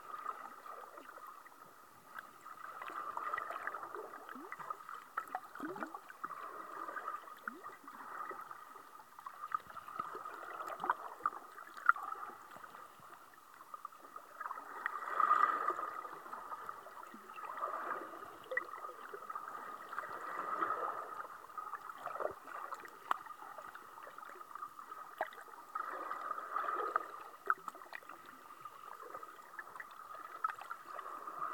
Shetland Islands, UK, Boddam, Dunrossness - Under the water, just off the rocky shoreline around Boddam, Dunrossness
This is the sound under the water near a field full of grazing sheep, in Shetland. The recording was made with one of Jez Riley French's hydrophones plugged into a FOSTEX FR-2LE. You can hear the activity of the tide, and some of the scraping noises as the same tide pushes the hydrophone against the rocks. I don't know if the nearby sheep are grown for wool or for meat, but their proximity to the sea was interesting to me, as on mainland Britain I have rarely seen sheep so near to the ocean.
1 August 2013